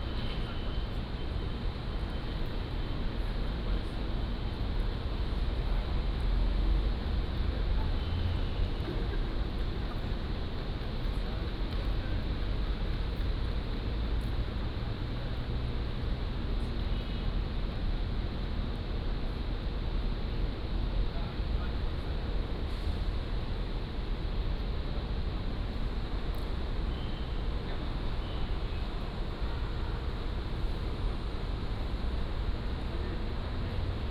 In the square outside the station
Wuri District, Taichung City, Taiwan